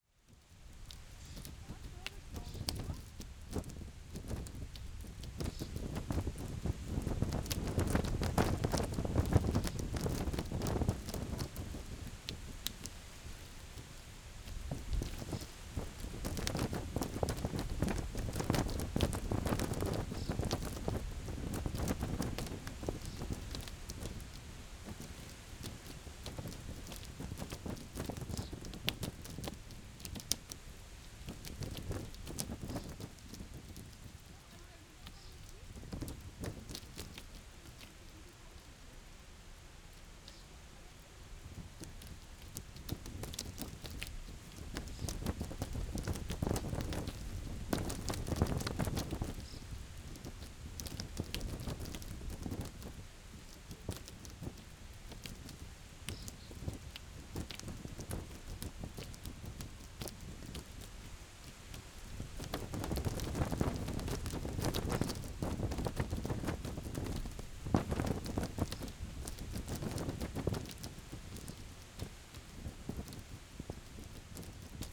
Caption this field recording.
the barrier tape around a small grasland und a tree flutters in the a moderate breeze. (tech: SD702 2xNT1a)